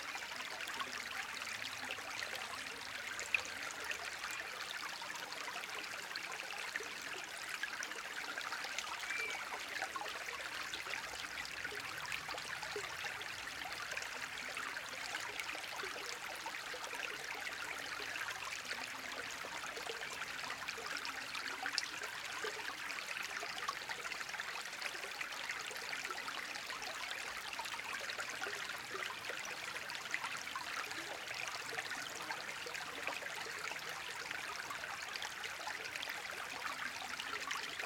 Inkūnai, Lithuania, two streamlets
Two streamlets becoming one
Anykščių rajono savivaldybė, Utenos apskritis, Lietuva, 12 August 2022